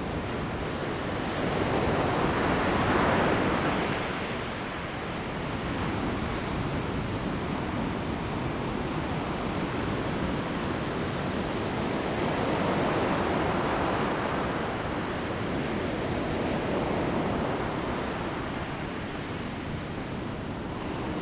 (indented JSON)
{
  "title": "牡丹灣, Mudan Township, Pingtung County - Sound of the waves",
  "date": "2018-04-02 12:31:00",
  "description": "at the beach, Sound of the waves\nZoom H2n MS+XY",
  "latitude": "22.20",
  "longitude": "120.89",
  "altitude": "4",
  "timezone": "Asia/Taipei"
}